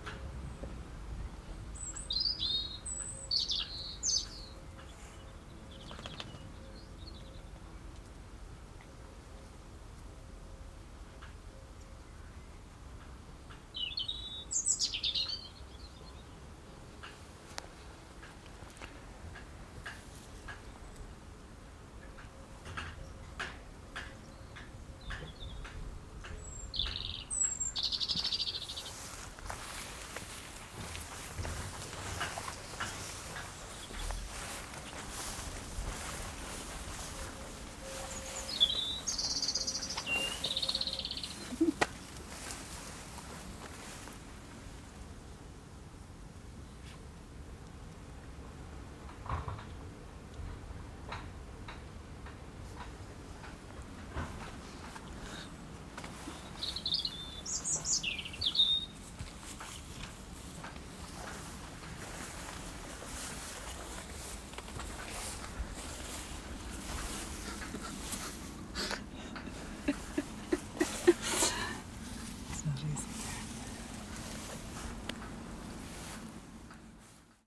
Clooncoul, Co. Clare, Ireland - binaural recording demonstration, Co. Clare, Ireland
demonstration binaural recording method
County Clare, Munster, Republic of Ireland, 17 May 2013